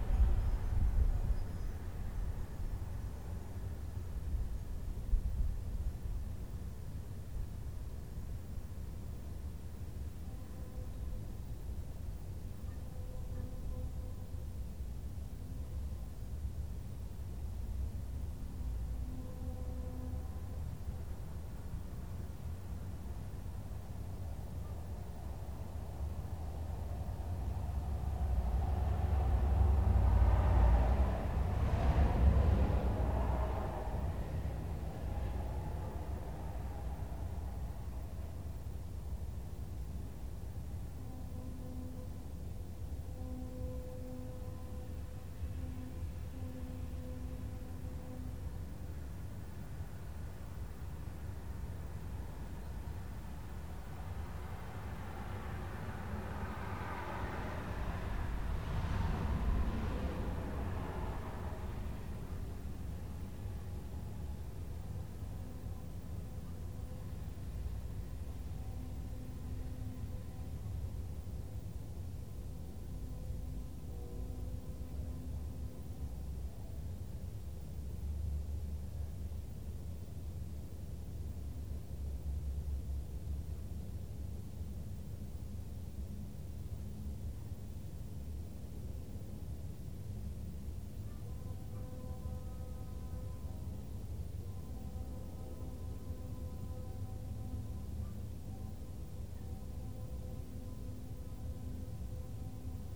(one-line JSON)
{"title": "California Ave SW, Seattle - 1520 Calif. Ave SW #1", "date": "1979-01-27 19:57:00", "description": "A quiet evening in West Seattle, overlooking Elliott Bay toward downtown. The sounds of human traffic are reflected off the concrete wall surrounding the parking lot beneath my deck, creating moiré patterns in sound. A multitude of sources overlap and blend in surprising ways.\nThis was my first phonographic \"field recording, \" taken off the deck of my West Seattle apartment with my then-new Nakamichi 550 portable cassette recorder. Twenty years later it became the first in a series of Anode Urban Soundscapes, when I traded in the Nak for a Sony MZ-R30 digital MiniDisc recorder and returned to being out standing in the field. The idea came directly from Luc Ferrari's \"Presque Rien\" (1970).\nMajor elements:\n* Car, truck and bus traffic\n* Prop and jet aircraft from Sea-Tac and Boeing airfields\n* Train horns from Harbor Island (1 mile east)\n* Ferry horns from the Vashon-Fauntleroy ferry (4 miles south)", "latitude": "47.59", "longitude": "-122.39", "altitude": "82", "timezone": "America/Los_Angeles"}